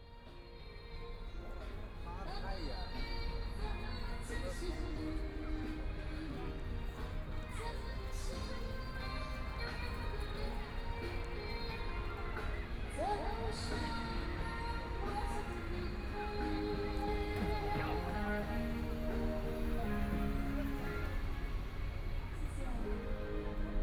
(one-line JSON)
{"title": "People's Square Park, Shanghai - on the road", "date": "2013-11-23 16:34:00", "description": "Walking on the road, There are people on the street singing, Walking across the two regional parks, Binaural recording, Zoom H6+ Soundman OKM II", "latitude": "31.24", "longitude": "121.47", "altitude": "6", "timezone": "Asia/Shanghai"}